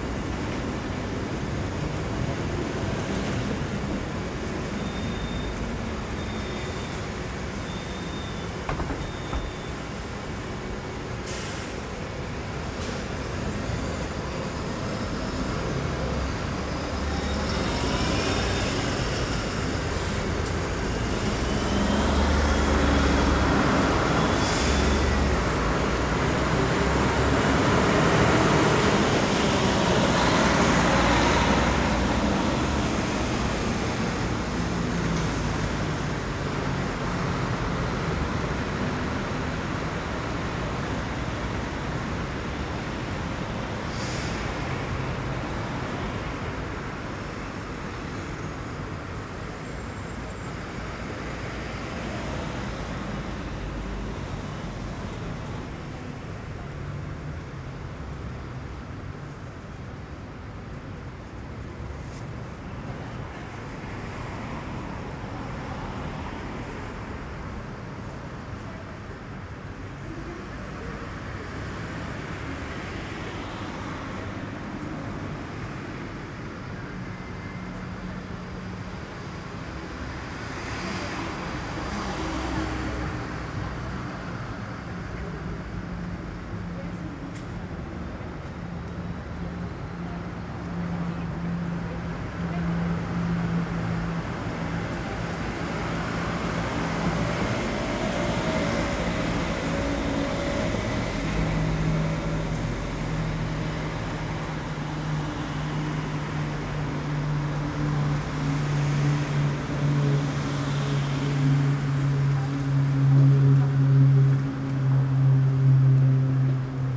Les Halles de Schaerbeek, Rue Royale-Sainte-Marie, Schaerbeek, Belgique - Road ambience
Lot of cars, busses.
Tech Note : Ambeo Smart Headset binaural → iPhone, listen with headphones.
Région de Bruxelles-Capitale - Brussels Hoofdstedelijk Gewest, België / Belgique / Belgien